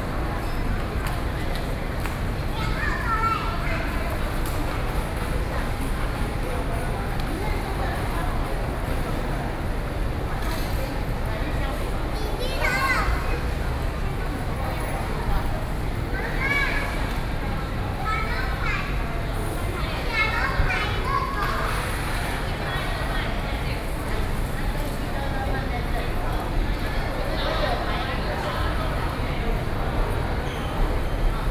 Taoyuan Station - Station hall
in the Station hall, Sony PCM D50 + Soundman OKM II